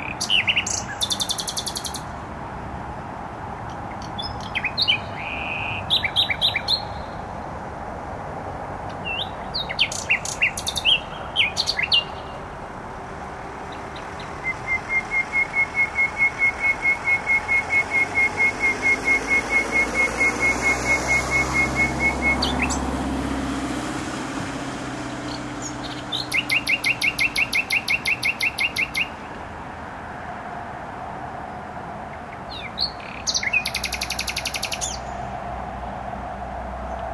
Bródno-Podgrodzie, Warszawa, Polska - Trasa Toruńska Nightingale
Recording nightingale singing in bushes close to noisy motorway. Recorder: Olympus LS-11
Warszawa, Poland, 8 May 2016